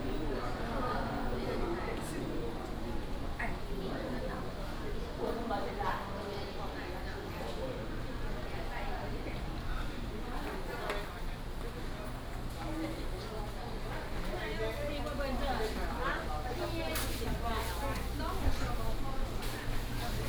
士林公有零售市場, Hsinchu City - Small traditional market
Small traditional market, vendors peddling
North District, Hsinchu City, Taiwan, 26 August 2017